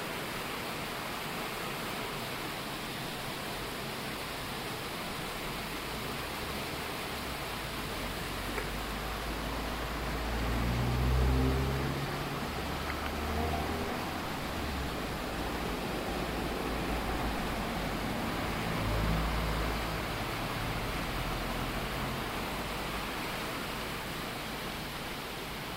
soundwalk with binaurals from south street, through St Mary's church, down towpath towards West Bay including weir and waterwheel at the Brewery and finally the A35 underpass.
18 July 2013, 12:00